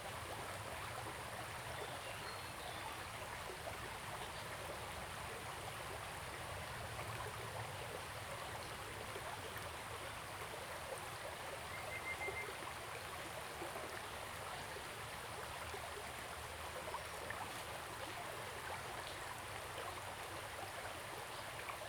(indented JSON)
{
  "title": "種瓜坑溪, 成功里 - Small streams",
  "date": "2016-04-20 15:03:00",
  "description": "Stream\nZoom H2n MS+XY",
  "latitude": "23.96",
  "longitude": "120.89",
  "altitude": "454",
  "timezone": "Asia/Taipei"
}